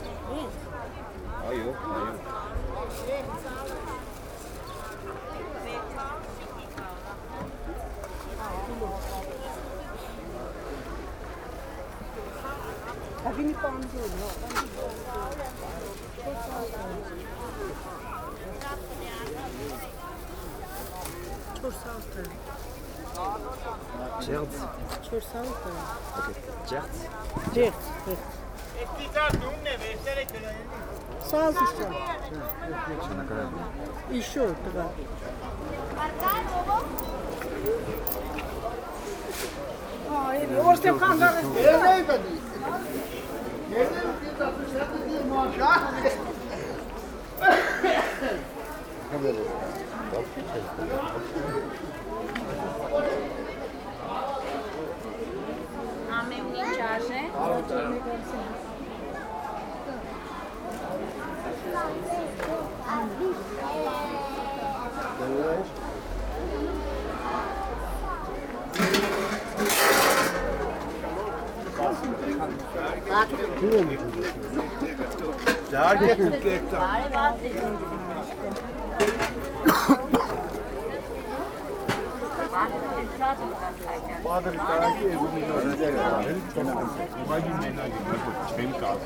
Gyumri, Arménie - Gyumri market
The daily Gyumri market, where you can find absolutely everything you want. A long walk between the between vendors' stalls.